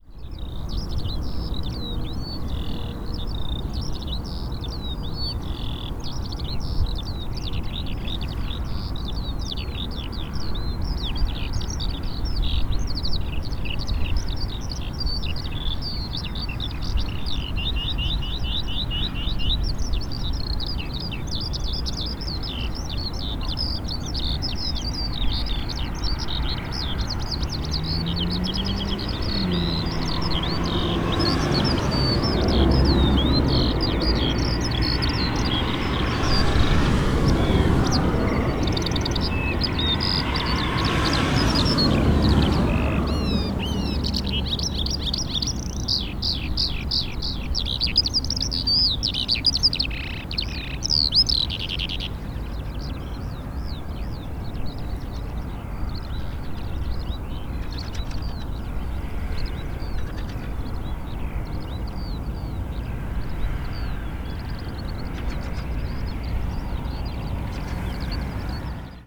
{"title": "Ole Rømers Vej, Taastrup, Denmark - Eurasian skylark and cars", "date": "2016-03-22 08:15:00", "description": "Lark singing. While cars are passing, the lark starts singing louder.\nAlouette des champs. Se met à chanter plus fort lorsque des voitures passent", "latitude": "55.68", "longitude": "12.27", "altitude": "25", "timezone": "Europe/Copenhagen"}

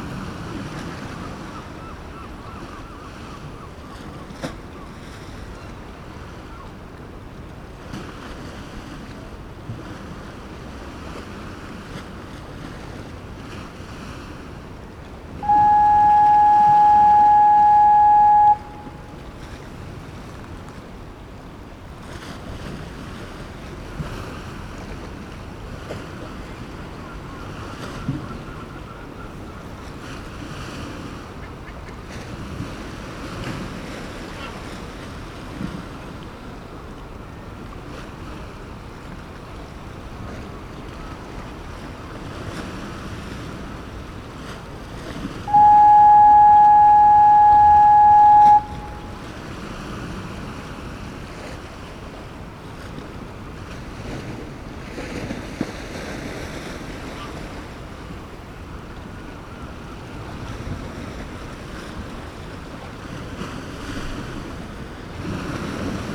{"title": "Whitby, United Kingdom - Klaxon at the end of East Pier", "date": "2016-04-09 10:12:00", "description": "Klaxon at the end of East Pier ... clear day ... malfunction ..? on test..? waves ... voices ... herring gulls ... boat goes by ... lavalier mics clipped to sandwich box lid ...", "latitude": "54.49", "longitude": "-0.61", "timezone": "Europe/London"}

